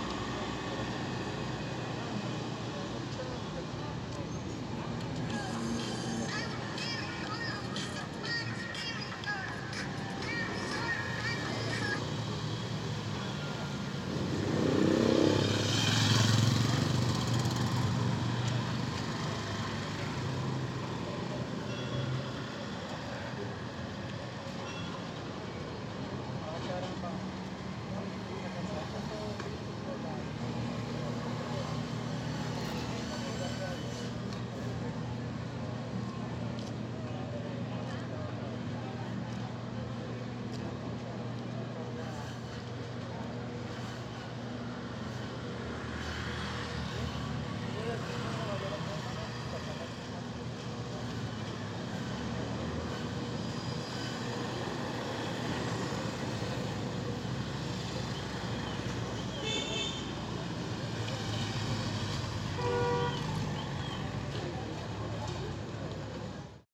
Cra., El Rosal, Cundinamarca, Colombia - Via Principal Centro El Rosal
IIn this environment you can hear a main road of the western savannah of Bogotá, in the municipality of El Rosal, we heard people talking in this location, heavy cars passing by, vans, cars and motorcycles, a child riding a bicycle, a lady dragging a shopping cart, a man coughing, cars braking and accelerating, whistles from cars and motorcycles, a lady receiving a call, car alarms, a child riding on a board or skateboard, a machine turned on in a butcher shop breaking bones, gas truck bells.n this environment you can hear a main road of the western savannah of Bogotá, in the municipality of El Rosal, we heard people talking in this location, heavy cars passing by, vans, cars and motorcycles, a child riding a bicycle, a lady dragging a shopping cart, a man coughing, cars braking and accelerating, whistles from cars and motorcycles, a lady receiving a call, car alarms, a child riding on a board or skateboard, a machine turned on in a butcher shop breaking bones, gas truck bells.